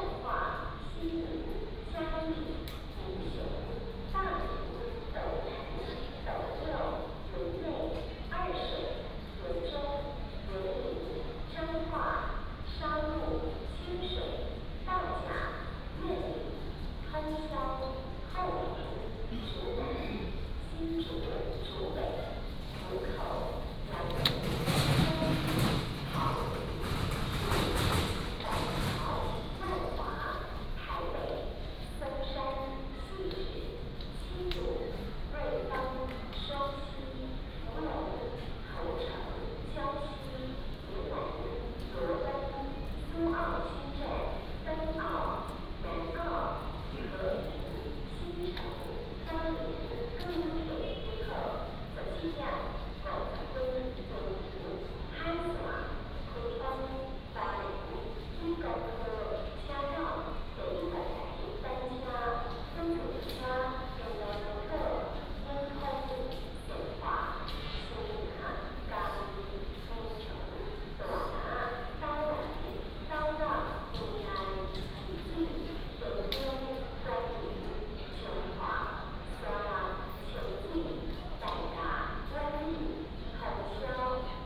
Tainan Station, East Dist., Tainan City - Station Message Broadcast
At the station platform, Station Message Broadcast